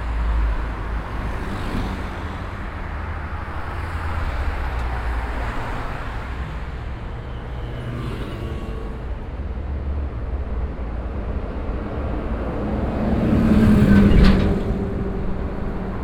essen, berne street, traffic
Another recording of the traffic at Berbe Street. Here traffic coming out if the tunnel meeting traffic coming from a second lane with interesting texture on the street surface.
Projekt - Klangpromenade Essen - topographic field recordings and social ambiences